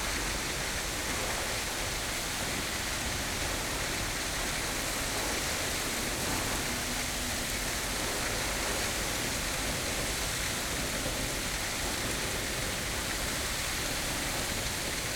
Chatsworth, UK - Emperor Fountain ...
Emperor Fountain ... Chatsworth House ... gravity fed fountain ... the column moves in even the slightest breeze so the plume falls on rocks at the base ... or open water ... or both ... lavalier mics clipped to sandwich box ... voices ... background noises ...